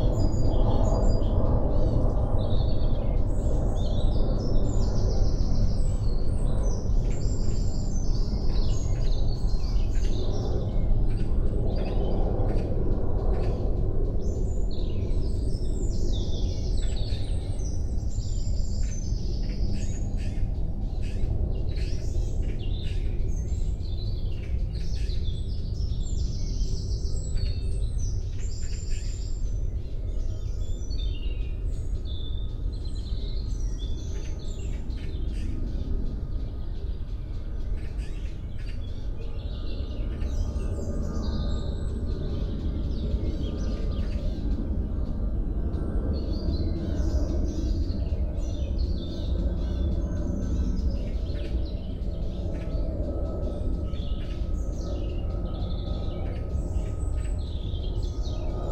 Simply think this could be our home. It would be only the right words I could use.
Goussainville Vieux-Pays is the name of this village. It's nearly a dead city.
During the year 1973, ADP (meaning Paris Airports) built the Roissy airport. Goussainville Vieux-Pays is exactly below the called '27L' take-off runway of the airport. The area is classified as an "intense noise" landscape. All the year 1973, ADP made proposals to buy the houses, double price compared to the normal price. Initially populated 1000 inhabitants, a large part of the village moved. On the same time, the 3 June 1973, the Tupolev plane Tu-144S CCCP-77102 crashed just near the old village, on the occasion of Bourget show, destroying a school. It made a large trauma.
During the 1974 year, 700 inhabitants leaved. All houses were walled with blocks. But 300 inhabitants absolutely refused to leave. Actually, Goussainville Vieux-Pays is a strange landscape. Nothing moved during 44 years.